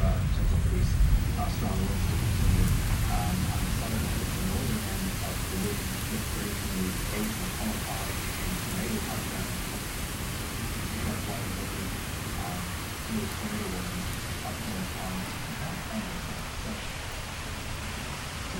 storm over lake calhoun, minneapolis- tornado coming